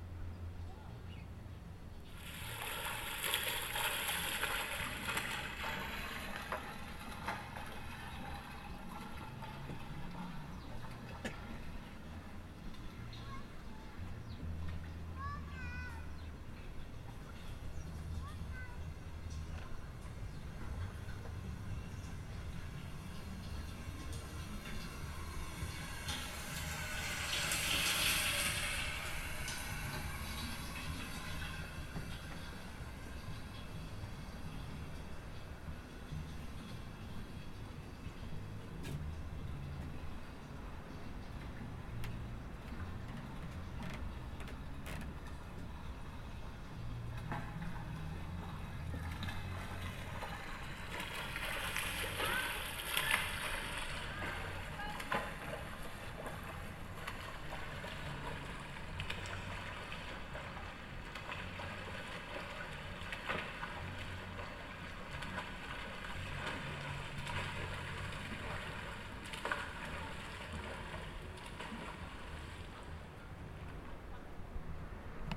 Jetzt Kunst No3, Männerbad
Jetzt Kunst No.3, Kunsttriennale für Kunstprojekte. Nachsaison im berühmten Marzilibad. Projekt maboart; abtröchne oder hier trocken, Wort- und Klangcollage
Bern, Schweiz